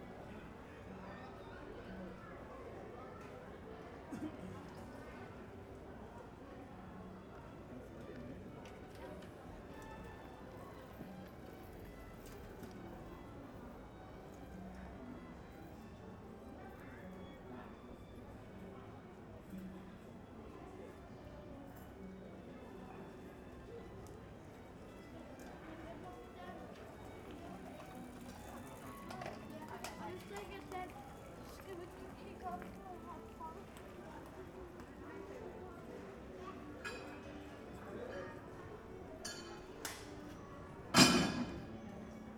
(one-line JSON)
{"title": "Skindergade, København, Denmark - Workers in side street", "date": "2017-07-22 12:27:00", "description": "Workers packing up a scaffold. Street violin band in the background from nearby shopping street. Pedestrians and cyclists. Swift calls. At the beginning, there are sounds from a commercial demonstration\nOuvriers rangeant un échauffaudage. Groupe de rue (violon) de la rue commercante voisine. Piétons et cyclistes. Cris de martinets. Au début, on peut entendre une manifestation à but commercial", "latitude": "55.68", "longitude": "12.58", "altitude": "6", "timezone": "Europe/Copenhagen"}